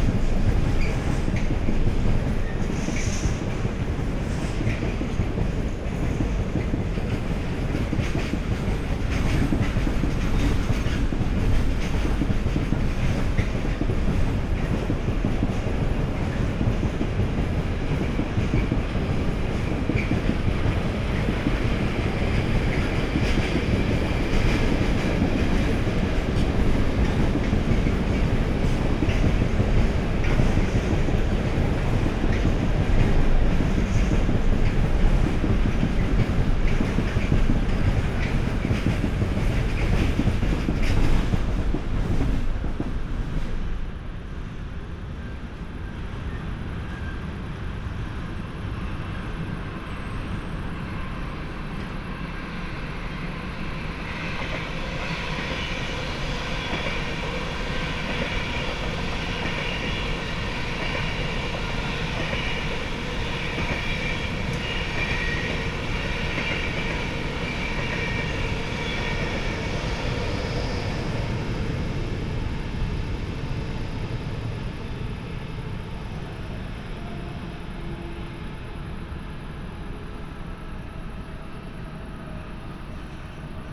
Mediapark, Köln - pedestrian bridge, trains, night ambience
Köln, Mediapark, pedestrian bridge, busy train traffic here all day and night
(Sony PCM D50, Primo EM172)